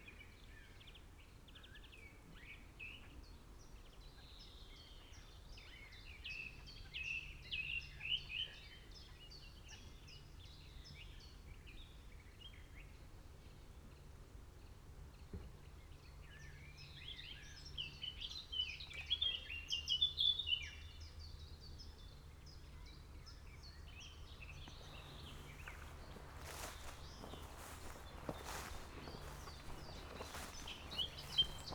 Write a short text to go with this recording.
walking around the lock at river Havel and nearby areas, listening to birds, (Sony PCM D50, Primo EM172)